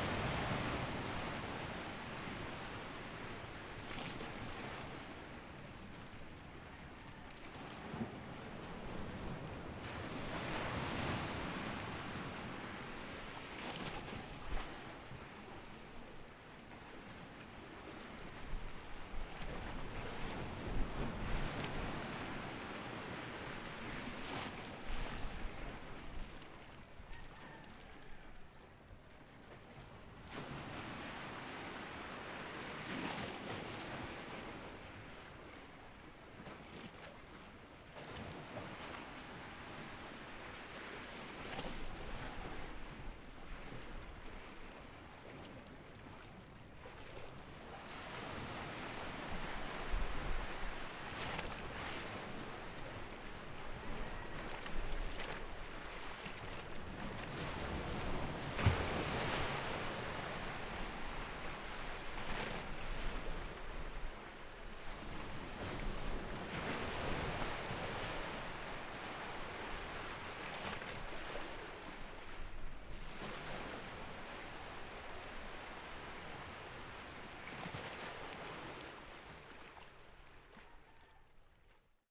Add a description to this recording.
Chicken sounds, On the coast, Sound of the waves, Zoom H6 XY